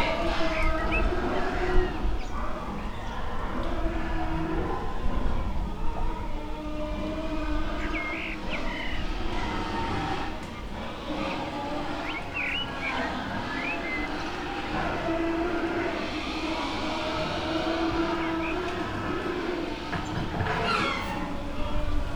Sao Goncalo, levada towards Camacha - entrance to animal barn
at the entrance to unsettling animal barn. it sounded as if all evil was breaking loose inside.